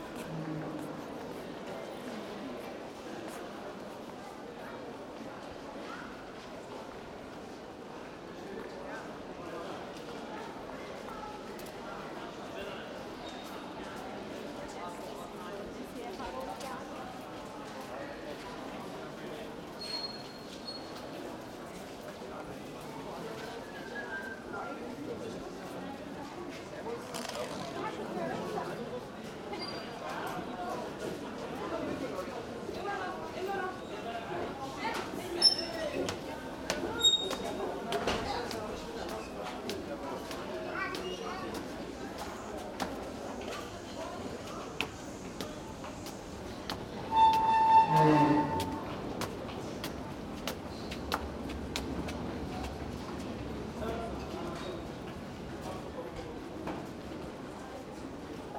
Mitte, Berlin, Germany - u bahn